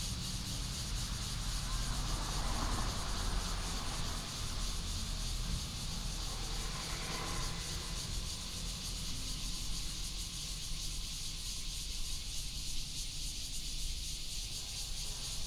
洪圳路, Pingzhen Dist., Taoyuan City - Cicadas
Cicadas, Factory sound, traffic sound, Binaural recordings, Sony PCM D100+ Soundman OKM II